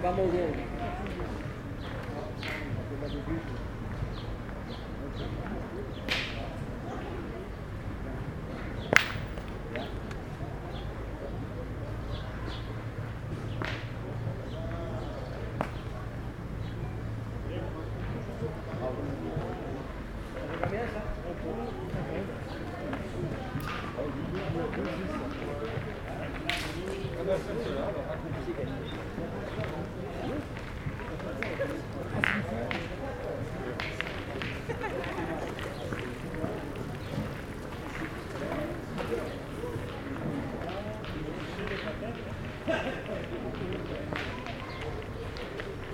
Le, Av. du Grand Port, Aix-les-Bains, France - Boulistes
Les joueurs de pétanque sous les platanes au Grand Port. ça roule ça s'entrechoque ça discute de la partie...